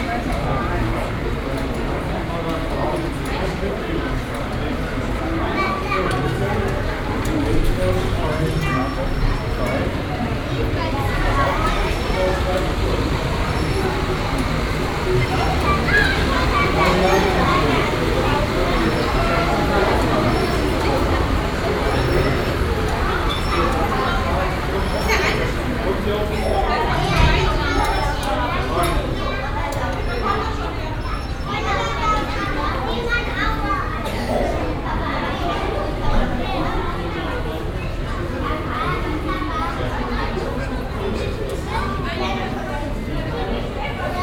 cologne, zoo, eingangsbereich
sonntag mittags am koelner zoo, besucherandrang, das klicken der ticketmaschinen, stimmengewirr, walkie talkie durchsagen, anweisungen
soundmap nrw - social ambiences - city scapes - topographic field recordings